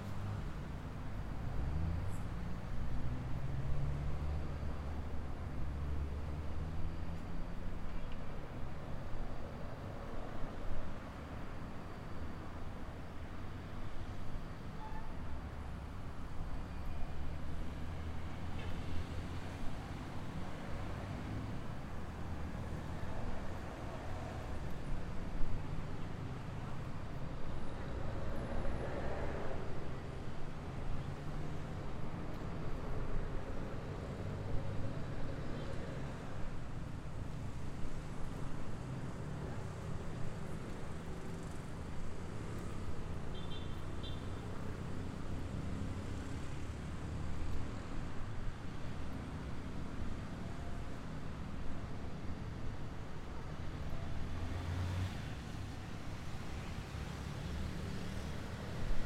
Cra., Medellín, Belén, Medellín, Antioquia, Colombia - Parqueadero de noche
Los grillos con los carros son los sonidos mas permanetes, en este de brea y saflato mojados por la lluvia, por lo cual se siente mucha calama en la compocion.